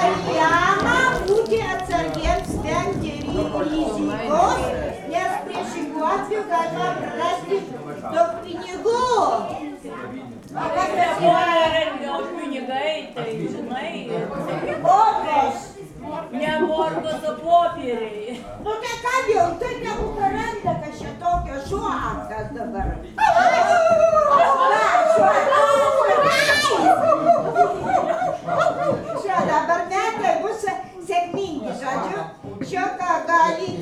Lithuania, Sudeikiai, drunken christmas people
some drunken santa with drunken hare in drunken crowd
27 December